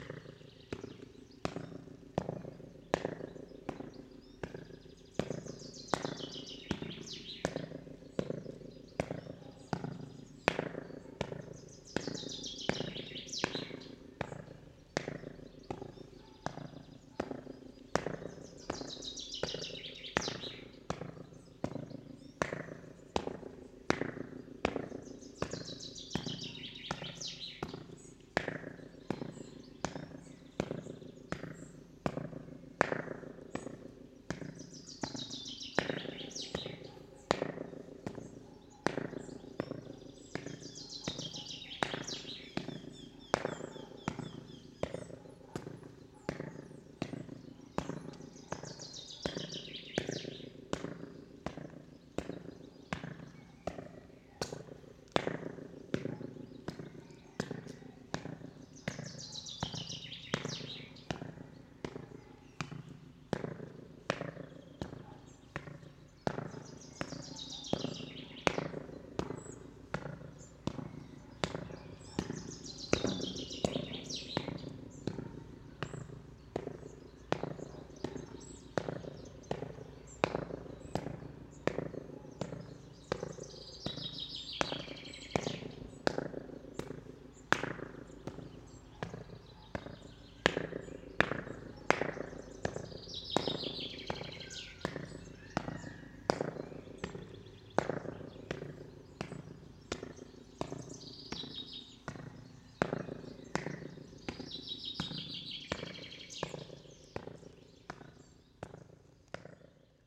Taujėnai, Lithuania, echo steps
I have found some strange spot with short echo in Taujenai manor...